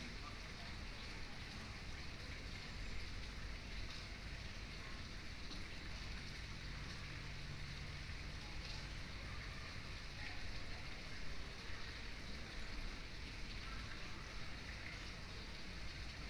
Evangelisches Stift in Tübingen - In the courtyard of the Protestant monastery in Tübingen
Im Hof des evangelischen Stifts in Tübingen. Ein kleiner Brunnen, 18 Uhr Glocken, Vögel.
In the courtyard of the Protestant monastery in Tübingen. A small fountain, 6 p.m. bells, birds.